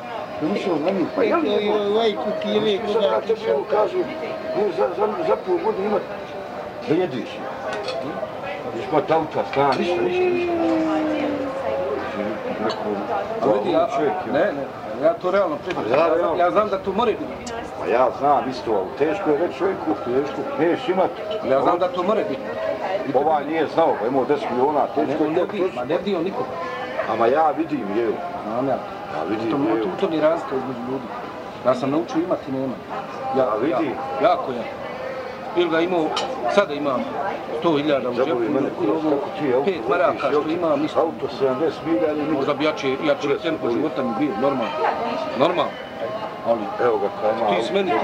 Baščaršija, Sarajevo, Bosnia and Herzegovina - Sarajevo street
September 1996 - Bosnia after war. Recorded on a compact cassette and a big tape recorder !
In the center of Sarajevo and near the Baščaršija, people are happy. Everybody is in streets, drinking mint tea and discussing.
10 September 1996, 10:00